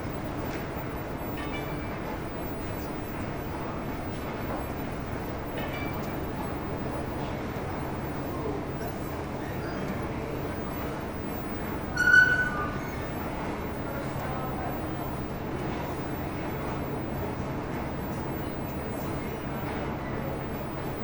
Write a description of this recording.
The Copenhagen metro, into the Fasanvej station. It's very quiet because Danish people use bikes to commute.